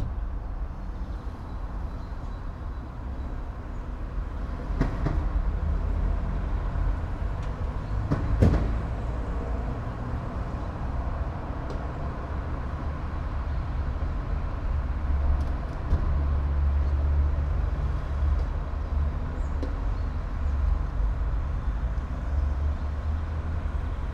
all the mornings of the ... - feb 1 2013 fri
Maribor, Slovenia, 1 February 2013, 09:03